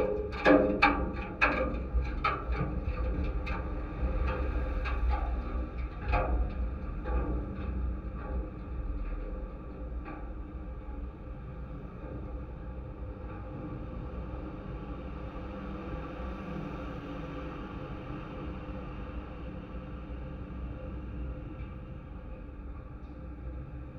Kaliningrad, Russia, auto and railway bridge
contact microphones on auto and railway bridge constructions...and I was asked by security what I am doing here....
Калининградская обл., Russia